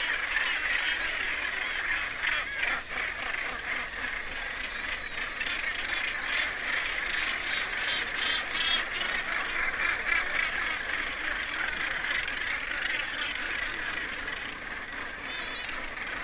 Bempton, UK - Gannet colony soundscape ...
Gannet colony soundscape ... RSPB Bempton Cliffs ... gannet calls and flight calls ... kittiwake calls ... lavalier mics in parabolic reflector ... warm ... sunny morning ...
Bridlington, UK, 22 July 2016, ~07:00